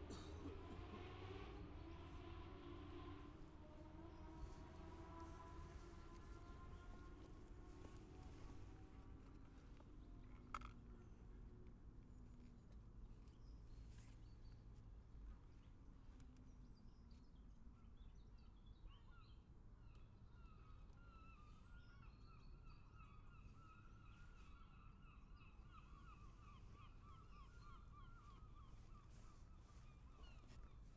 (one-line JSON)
{"title": "Scarborough, UK - motorcycle road racing 2017 ... newcomers ...", "date": "2017-04-22 09:14:00", "description": "New comers warmup ... Bob Smith Spring Cup ... Olivers Mount ... Scarborough ... 125 ... 250 ... 400 ... 600 ... 1000cc bikes and sidecars ... plenty of background sounds before the bikes arrive ... open lavalier mics clipped to sandwich box ... voices ... bird calls ...", "latitude": "54.27", "longitude": "-0.41", "altitude": "147", "timezone": "Europe/London"}